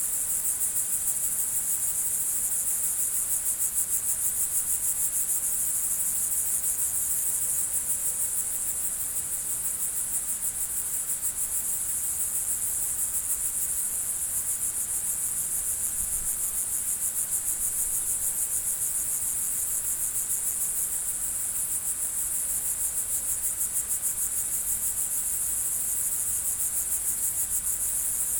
Lądek-Zdrój, Pologne - Grasshoppers
A lot of crazy grasshoppers in a extensive grazing.
Poland, 17 August 2016, ~21:00